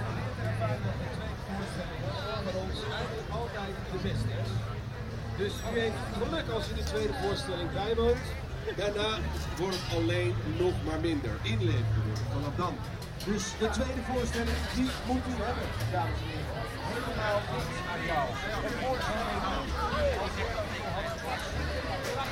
Zoom H2 recorder with SP-TFB-2 binaural microphones.
The Hague, Netherlands, 7 July 2011